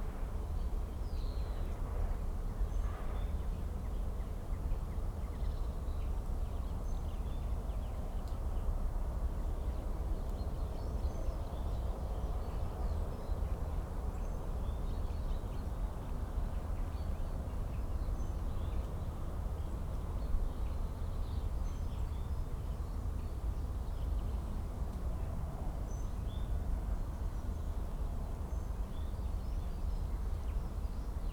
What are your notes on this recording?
field boundary soundscape ... with added wood pigeon shoot ... lavaliers clipped to sandwich box ... started to record and then became aware of shooter in adjacent field ... bird calls ... song ... from ... wren ... blackbird ... crow ... great tit ... blue tit ... jackdaw ... brambling ... chaffinch ... skylark ... background noise ...